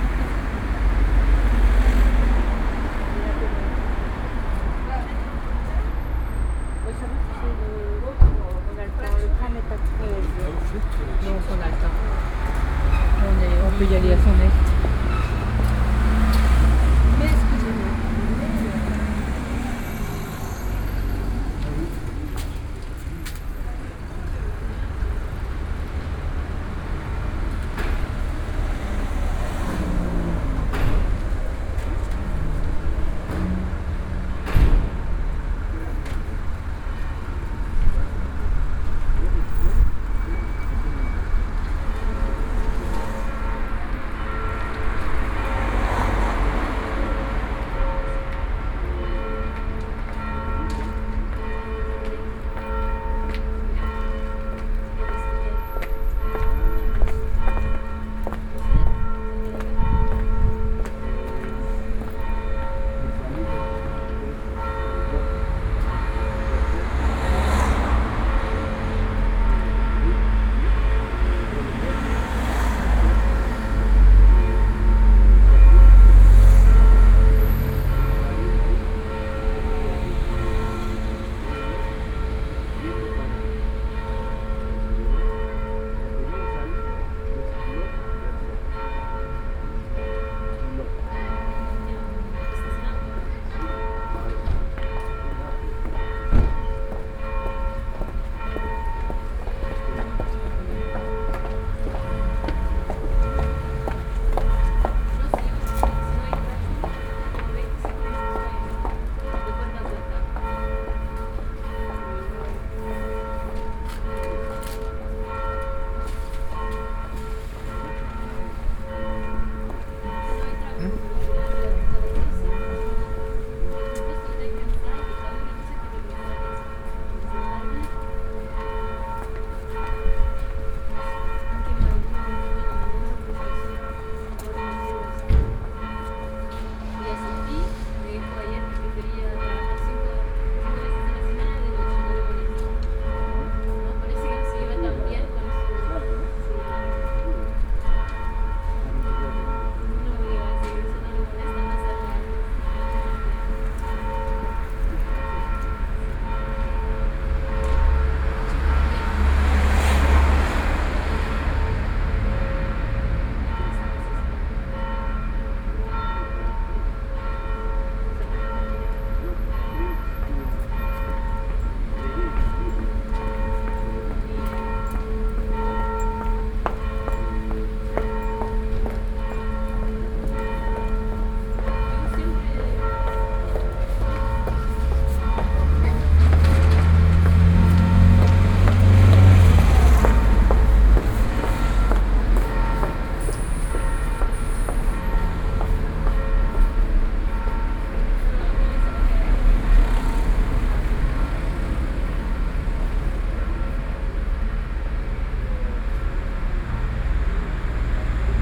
Brussels, Bells Rue Vanderkindere
Les cloches Rue Vanderkindere/
By JM Charcot.
Uccle, Belgium